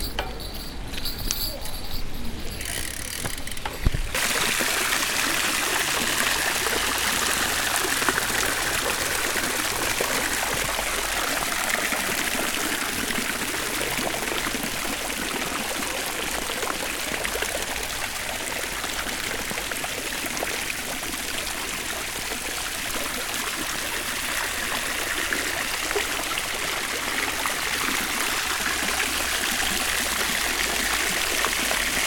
Rue de la Grenette, Chambéry, France - Fontaine
Le tour de la fontaine de la place de la Grenette arrivée avec mon vélo à grelots.